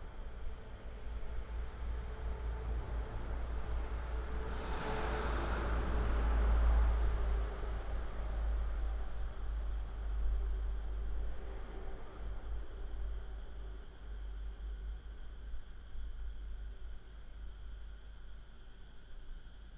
Late at night in the square of the temple, traffic sound, Insects, Frogs, Binaural recordings, Sony PCM D100+ Soundman OKM II